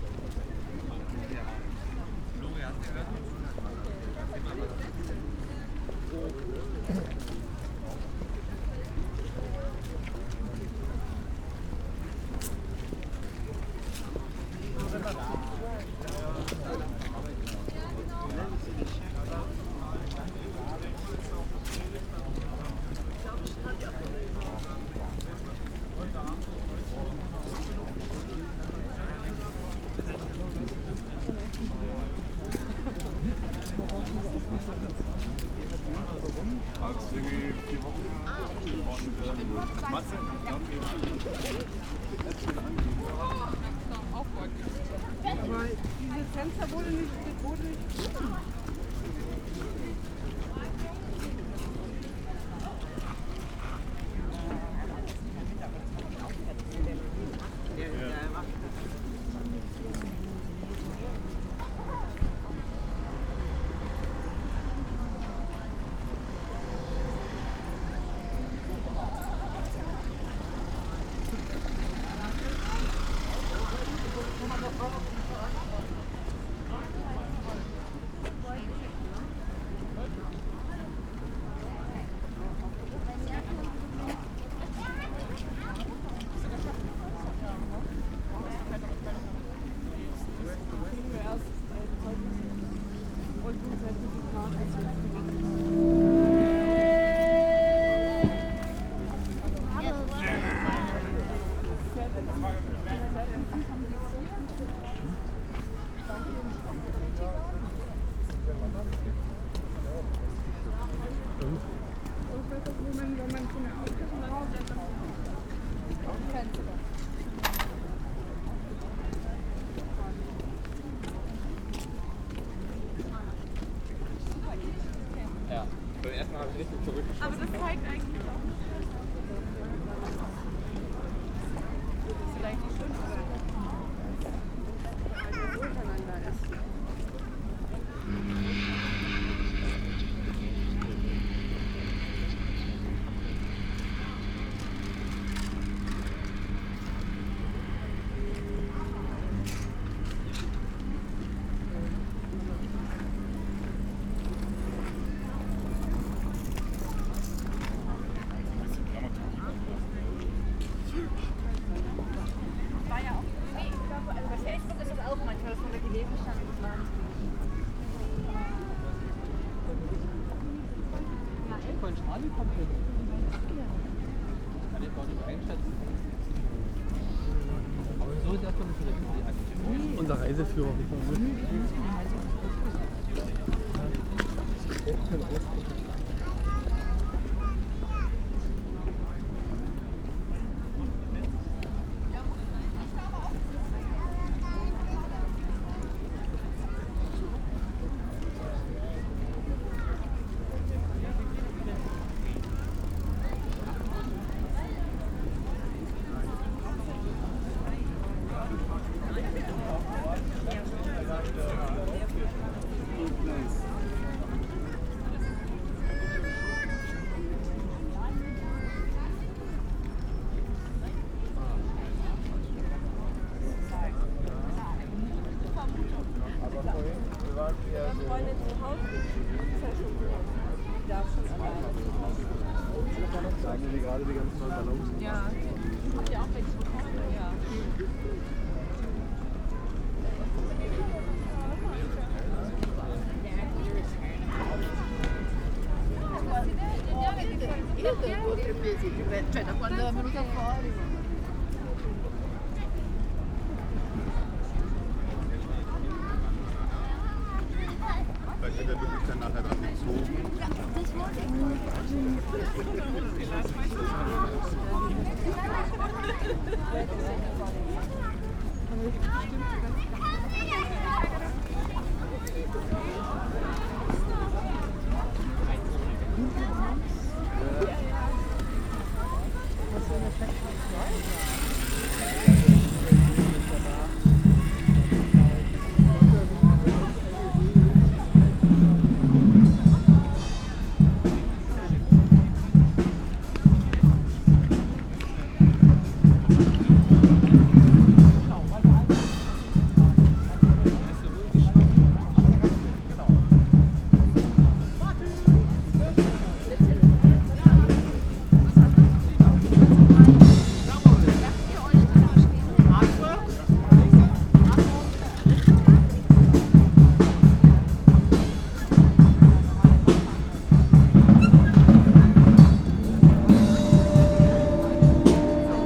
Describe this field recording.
soundwalk during the 25th anniversary of the fall of the berlin wall, the city, the country & me: november 9, 2014